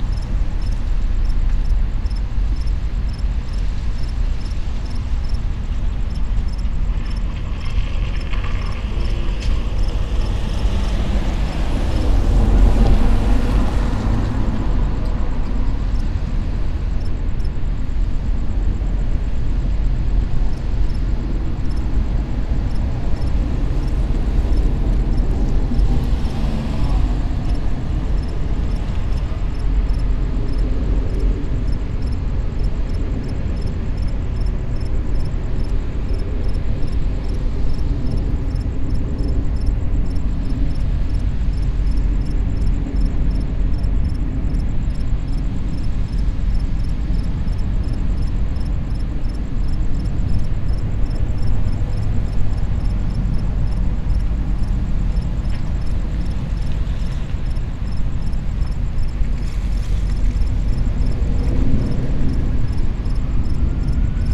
A current railroad yard, this large parcel is favored for a future ecological restoration.
California, United States of America, 20 July